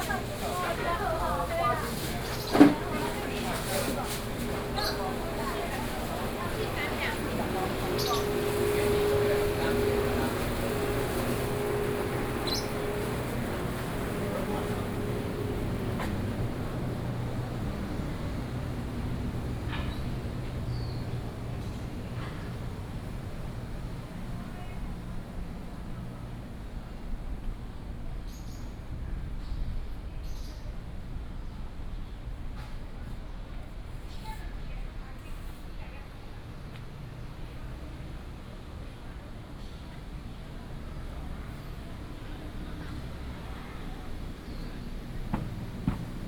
Aly., Ln., Wenzhou St., Da’an Dist. - Traditional Market
the traditional markets, Small alley